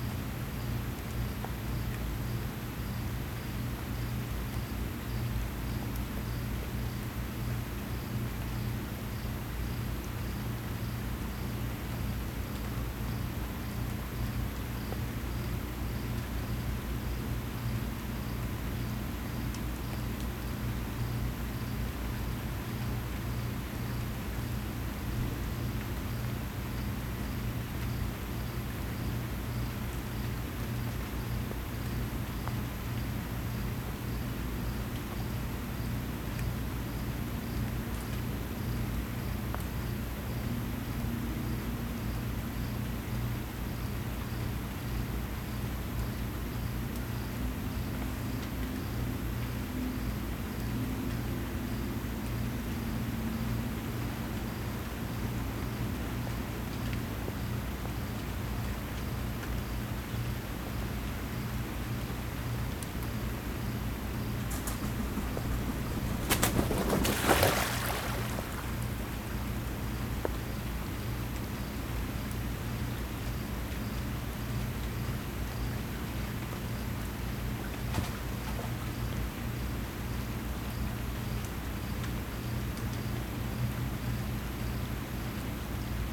Rain, Cicadas and the Cricket Machine, Houston, Texas - Rain, Cicadas and the Cricket Machine
**Binaural recording**. Recorded at my sister's apt on a small lake as a memento before she moves out of the country. Cicadas, rain, ducks, and the ever-present air compressor that feeds an aerator in the "lake", emanating a round the clock drone and synthetic cricket chirp for all of the residents' year-round enjoyment.
CA-14 omnis (binaural) > DR100 MK2